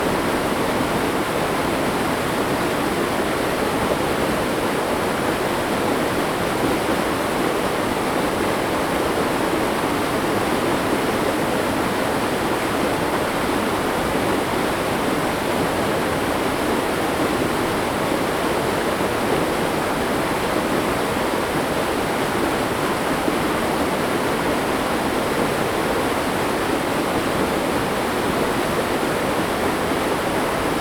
福興村, Ji'an Township - Farmland irrigation waterways
Farmland irrigation waterways, Streams of sound, Hot weather
Zoom H2n MS+XY
Ji-an Township, 花23鄉道